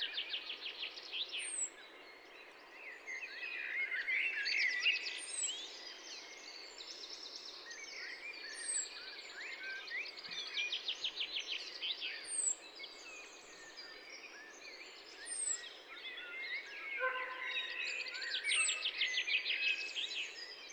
Route de la Tuilerie, Massignieu-de-Rives, France - chien en laisse au loin et oiseaux de printemps.
chien en laisse au loin et oiseaux de printemps.
Tascam DAP-1 Micro Télingua, Samplitude 5.1
21 April 1998, 10:00